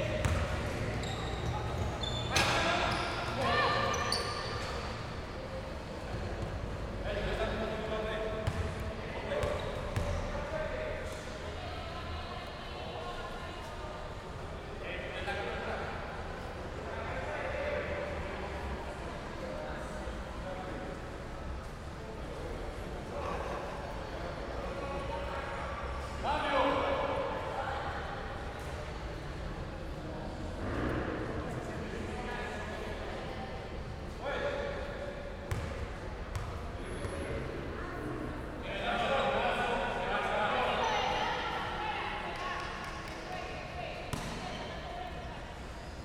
{"title": "KR 87 # 48 BB - 30, Medellín, Antioquia, Colombia - Coliseo Universidad de Medellín", "date": "2021-09-24 12:30:00", "description": "Partido de basquetbol en el coliseo, sin público representativo en un día soleado.\nSonido tónico: Voces, pelota rebotando y zapatos.\nSeñal sonora: Gritos, aplausos.\nSe grabó con una zoom H6, son micrófono MS.\nTatiana Flórez Ríos - Tatiana Martínez Ospino - Vanessa Zapata Zapata", "latitude": "6.23", "longitude": "-75.61", "altitude": "1563", "timezone": "America/Bogota"}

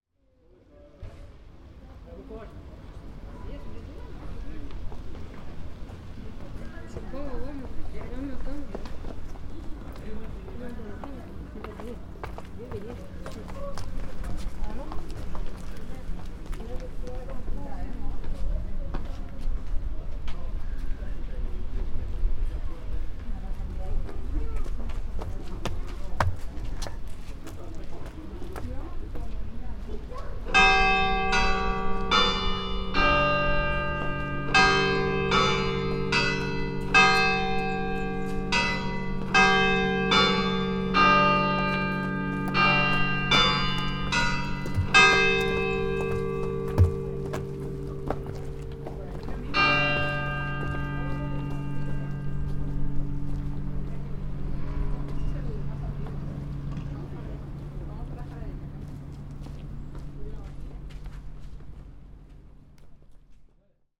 {"title": "Cathédrale Saint-Maurice dAngers, Angers, France - (596) Stairs ATMO + bells at the end", "date": "2019-08-21 16:58:00", "description": "Another attempt at getting cathedral bells with a circumfluent atmosphere around that time.\nORTF recording made with Sony PCM D-100", "latitude": "47.47", "longitude": "-0.56", "altitude": "50", "timezone": "Europe/Paris"}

